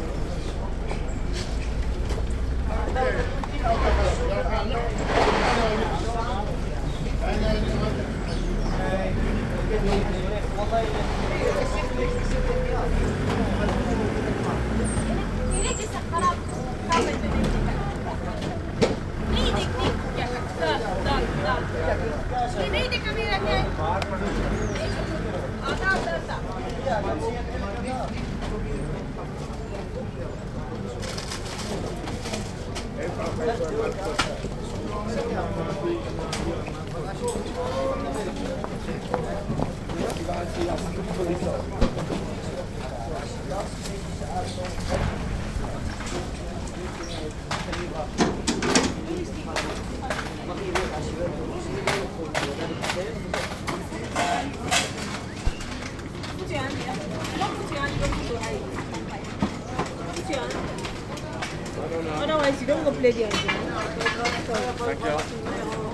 Dapperbuurt, Watergraafsmeer, The Netherlands - dappermarkt ambience
The ambience from the Dappermarkt - supposedly one of the most intercultural markets of Amsterdam. City's residents of Surinamese, Antillian, Turkish, and Moroccan origin learned how to mimick the real Dutch business calls of the fruit and fish sellers: Ja, ja, kom op, echte holandse ardbeien.. lekker hoor..